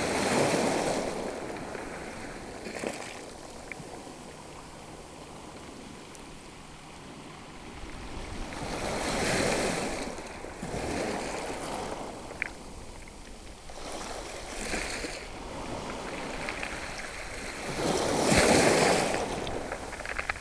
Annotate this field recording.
Sound of the sea, with waves lapping on the gravels, in Cayeux. It's a shingle beach. This is the end of the high tide with small waves. This sound is an anniversary. It's exactly now the 100 days of radio Aporee. ♪ღ♪*•.¸¸¸.•*¨¨*•.¸¸¸.•*•♪ღ♪¸.•*¨¨*•.¸¸¸.•*•♪ღ♪•*•.¸¸¸.•*•♪ღ♪, ♪ღ♪░H░A░P░P░Y░ B░I░R░T░H░D░A░Y░░♪ღ♪, *•♪ღ♪*•.¸¸¸.•*¨¨*•.¸¸¸.•*•♪¸.•*¨¨*•.¸¸¸.•*•♪ღ♪••.¸¸¸.•*•♪ღ♪¸. ______(¯`v´¯)_______(¯`v´¯) Thank you udo, ____ (¯`(✦)´¯) _____(¯`(✦)´¯)Thank you udo, ___¶¶ (_.^._)¶¶___¶¶¶(_.^._)¶¶ Thank you udo, _¶¶¶¶¶¶¶¶¶¶¶¶¶_¶¶¶¶¶¶(¯`v´¯)¶¶Thank you udo, ¶¶¶¶¶¶¶¶¶¶¶¶¶¶¶¶¶¶¶¶(¯`(✦)´¯)¶ Thank you udo, ¶¶¶¶¶¶¶¶¶¶¶¶¶¶¶¶¶¶¶¶¶(_.^._)¶¶¶Thank you udo, ¶¶¶¶¶¶¶¶¶¶¶¶¶¶¶¶¶(¯`v´¯)¶¶¶¶¶¶ Thank you udo, _¶¶¶¶¶¶¶¶¶¶¶¶¶¶¶(¯`(✦)´¯)¶¶¶¶Thank you udo, ___¶¶¶¶¶¶¶¶¶¶¶¶¶¶(_.^._)¶¶¶¶Thank you udo, _____¶¶¶¶¶¶¶(¯`v´¯)¶¶¶¶¶¶¶Thank you udo, _______¶¶¶¶(¯`(✦)´¯)¶¶¶¶Thank you udo, _________¶¶¶(_.^._)¶¶¶Thank you udo, ___________¶¶¶¶¶¶¶¶Thank you udo, ______________¶¶¶Thank you udo